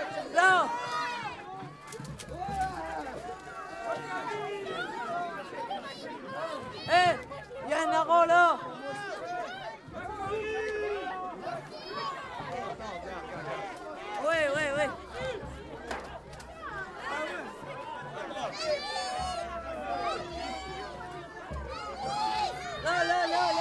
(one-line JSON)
{"title": "Pl. du Village, Dunkerque, France - Carnaval de Dunkerque - Mardyck", "date": "2020-02-15 16:00:00", "description": "Dans le cadre du Carnaval de Dunkerque - Bourg de Mardyck (Département du Nord)\nBande (défilée) de Mardyck\n\"Libérez les harengs !\" - le défilée prend fin...", "latitude": "51.02", "longitude": "2.25", "altitude": "3", "timezone": "Europe/Paris"}